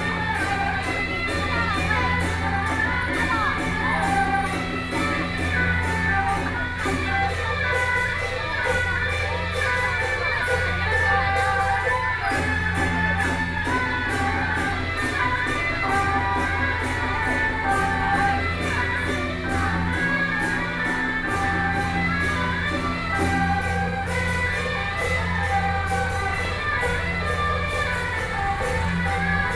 Ln., Sec., Lixing Rd., Sanchong Dist., New Taipei City - Traditional temple festivals